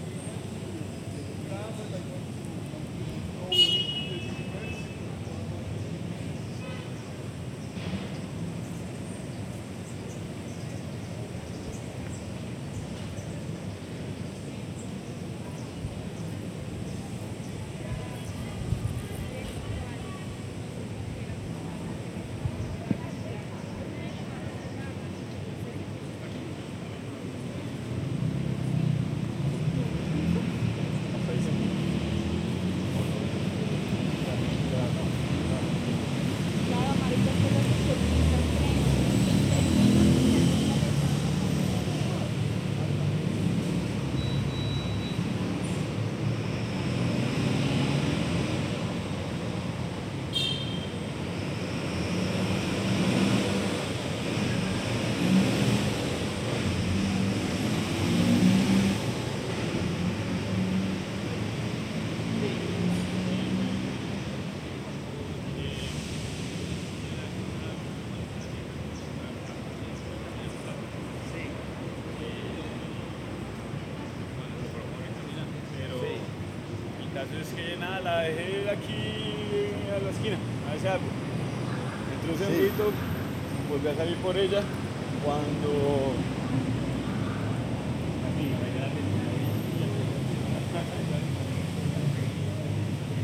Cl., Bogotá, Colombia - ParkWay Bogotá
Una tarde de Sábado en Semana santa del 2022 en el ParkWay Bogotá - Registrado con Zoom H3-VR / Binaural